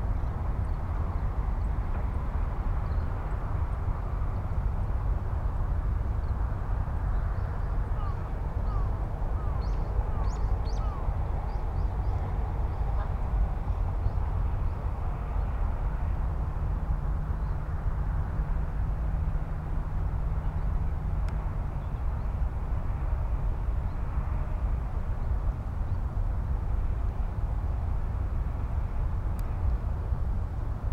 Traffic rumble, crows, kids playing in the distance, and an hourly public address message that asks people to obey park rules: no golf, RC cars or aircraft, fireworks, unleashed dogs, fires, littering, or other activities that may disturb people. The same recorded message dominates the sonic environment of the park (Japanese name: 野洲川立入河川公園).
Shiga-ken, Japan, January 2017